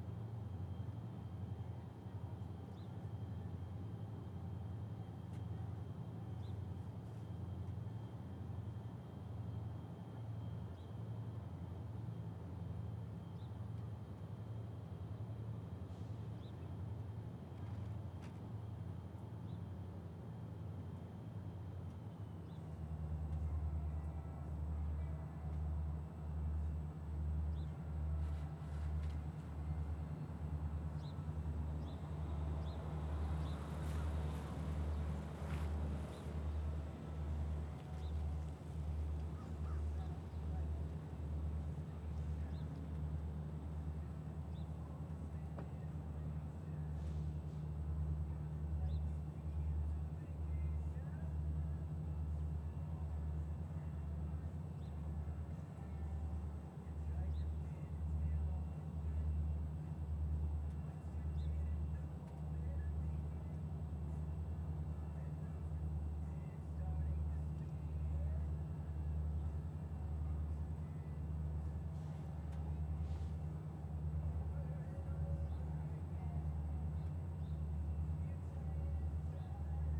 Recorded in the parking lot of the Forgotten Star Brewery adjacent to the railroad tracks leading to the Northtown yard in Fridley, MN
Minnesota, United States